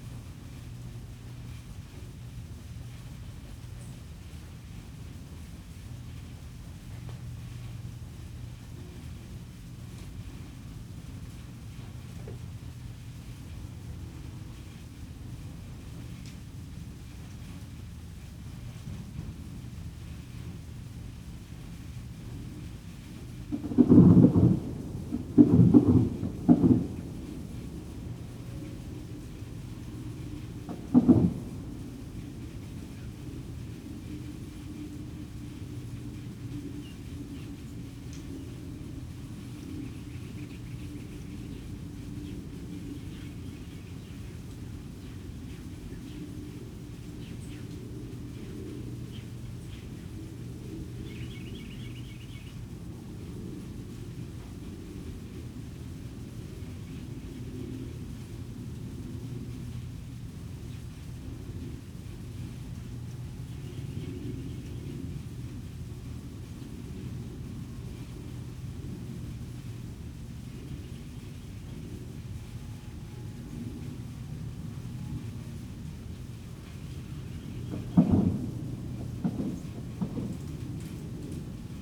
Fayette County, TX, USA - Dawn Ranch
Recorded with a pair of DPA4060s and a Marantz PMD661.
20 December 2015, 05:00